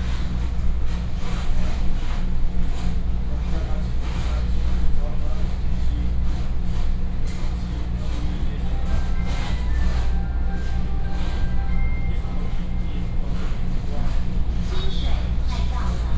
沙鹿區鹿峰里, Shalu Dist., Taichung City - In the train compartment
In the train compartment, From Shalu Station to Qingshui Station